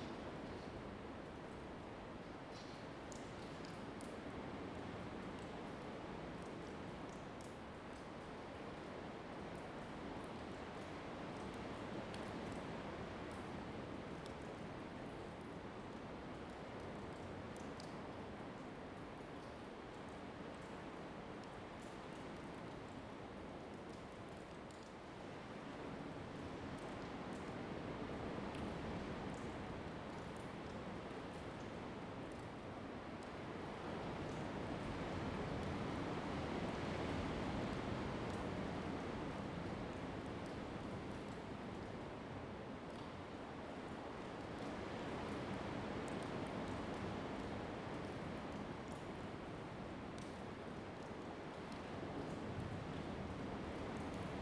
燕子洞, Lüdao Township - In a large cave inside
In a large cave inside
Zoom H2n MS +XY
Taitung County, Taiwan, 31 October 2014, ~09:00